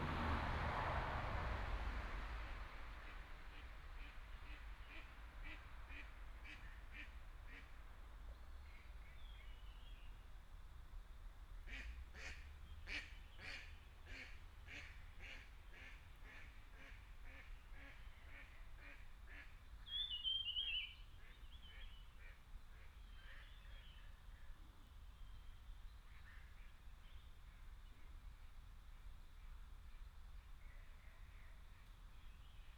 草楠濕地, 南投縣埔里鎮桃米里 - Bird calls
Bird sounds, Morning in the wetlands